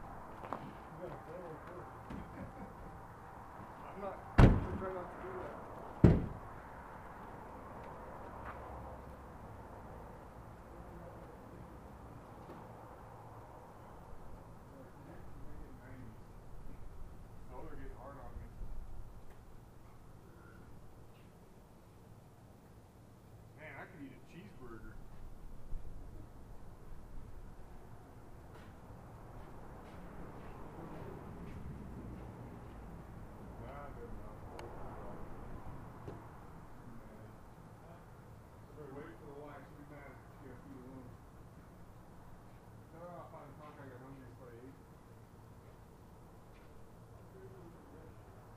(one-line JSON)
{"date": "2018-06-28 16:52:00", "description": "so hot. chinqi listens from yukikos roof. some workers return to homebase and JUST as the FIRE MONKEY hour draws to a close and the FIRE BIRD hour begins eka emerges from the office and we are done here!", "latitude": "35.57", "longitude": "-105.70", "altitude": "2152", "timezone": "America/Denver"}